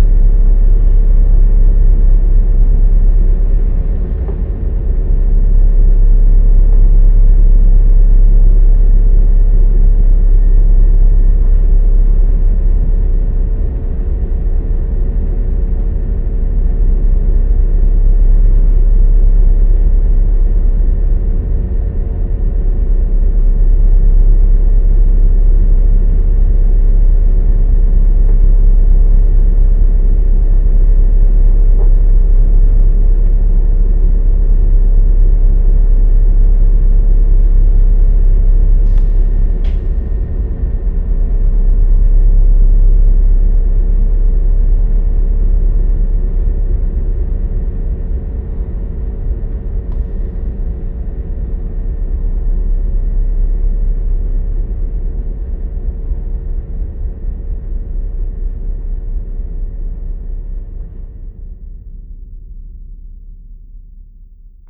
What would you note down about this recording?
On the ferry in the car bladebone. The sound of the ship motor. international sound scapes - topographic field recordings and social ambiences